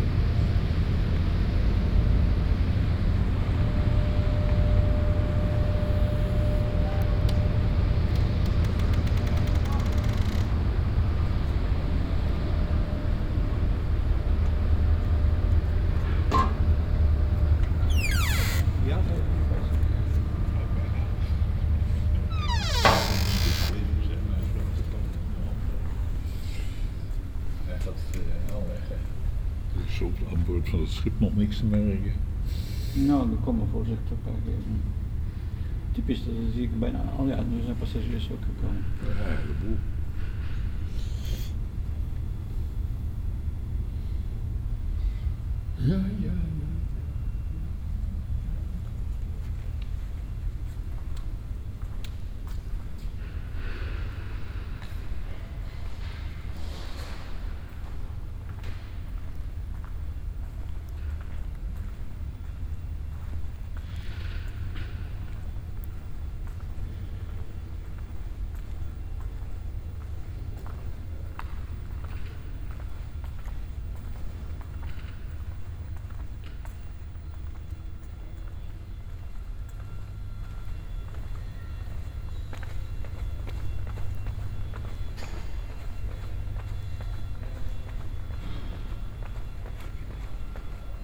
Music building at the IJ, harbour Amsterdam - World Listening Day Music building at the IJ, Amsterdam
short sound walk through the public spaces of the Music Building at the IJ, Amsterdam harbour . A tall cruiseship is waiting for departure; because its a hot day doors are opened and the sounds of the ships in the harbour is resonating in the public space of the building; on the other side of the building sounds of trains and cars are coming through.